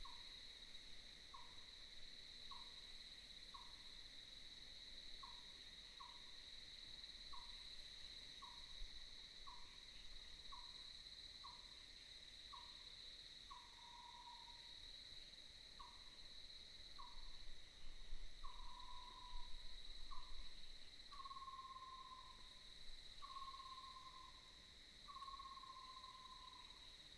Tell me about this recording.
Next to the woods, Birds sound, The sound of cicadas